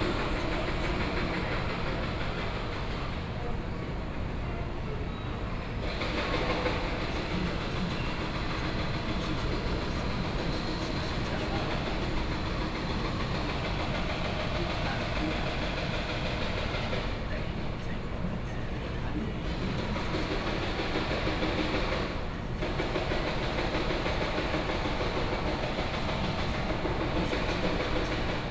Kaohsiung Station, Taiwan - Station hall
In the station lobby, Voice message broadcasting station, Construction site noise
Kaohsiung City, Sanmin District, 高雄市政府交通局 公車服務中心火車站(捷運高雄車站)